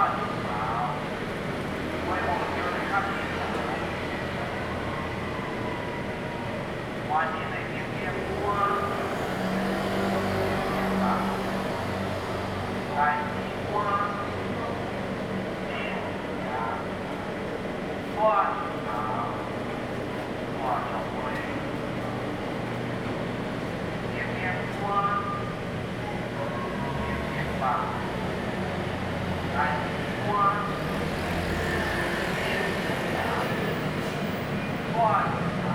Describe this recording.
Old street, Traffic Sound, Vendors Publicity, Zoom H2n MS+XY